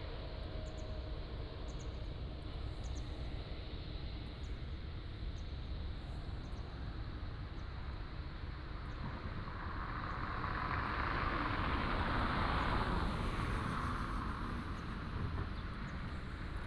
Wenhua Rd., Nangan Township - Next to the reservoir
Next to the reservoir, Traffic Sound, Birdsong, Dogs barking